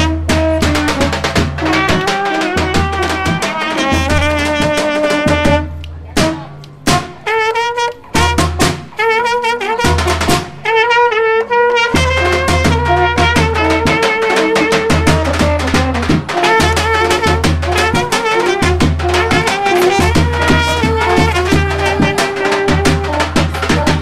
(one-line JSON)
{"title": "Trubaci u Knez Mihajlovoj, Belgrade", "date": "2011-06-15 17:10:00", "latitude": "44.82", "longitude": "20.45", "altitude": "115", "timezone": "Europe/Belgrade"}